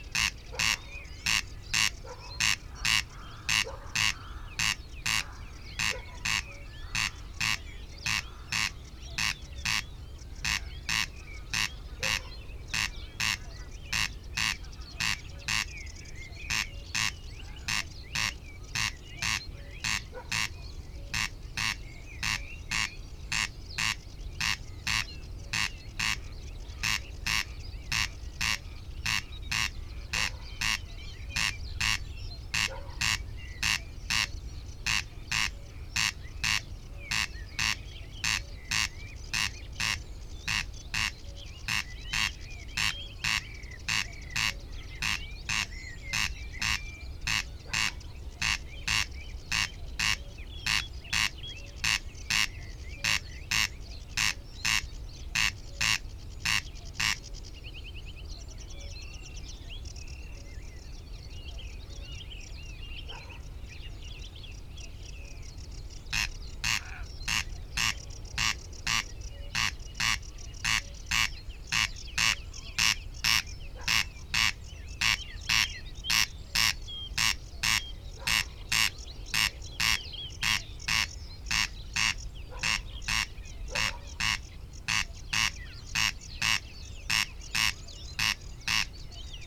Unnamed Road, Isle of Islay, UK - corn crake ... crex ... crex ... etc ...
Corncrake soundscape ... RSPB Loch Gruinart ... omni mics in a SASS through a pre-amp ... calls and songs from ... sedge warbler ... blackbird ... reed bunting ... song thrush ... cuckoo ... rook ... wren ... lapwing ... greylag geese ... moorhen ... gadwall ... crow ... jackdaw ... and a dog ... not edited or filtered ...